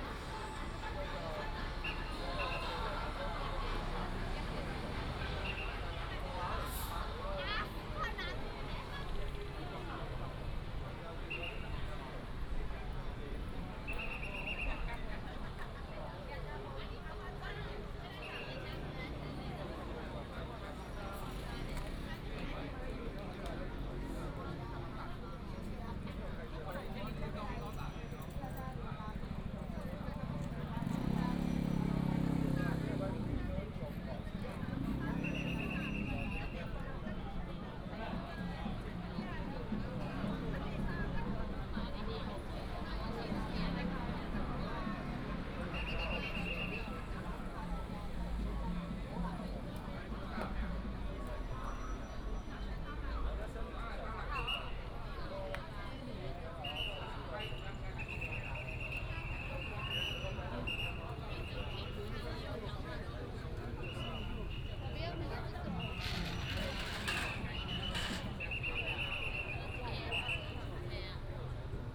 Zhongzheng Rd., Baozhong Township - Firecrackers and fireworks
Firecrackers and fireworks, Many people gathered at the intersection, Traffic sound